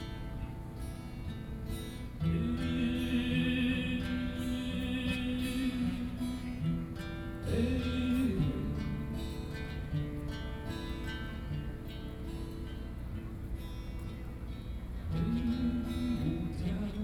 Legislative Yuan, Taiwan - Protest songs
Protest songs, Antinuclear, Zoom H4n+ Soundman OKM II, Best with Headphone( SoundMap20130526- 7)